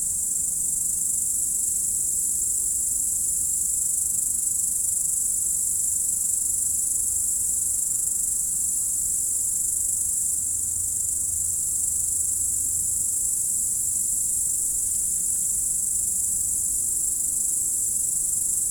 route du col du Chat, Bourdeau, France - Au crépuscule
Au bord de la route du col du Chat au dessus du lac du Bourget les insectes du talus, sauterelles vertes, passage d'une moto en descente et de voitures, la nuit arrive . enregistreur DAT Teac Tascam DAP1, extrait d'un CDR gravé en 2006 .
Auvergne-Rhône-Alpes, France métropolitaine, France